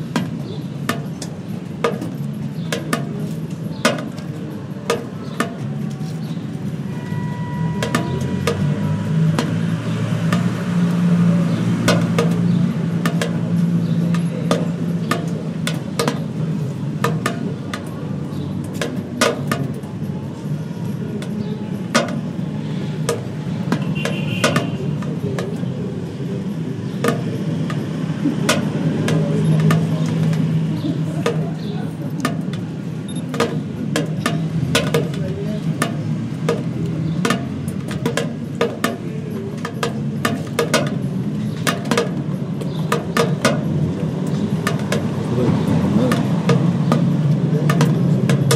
Toplicin venac, water dripping, Belgrade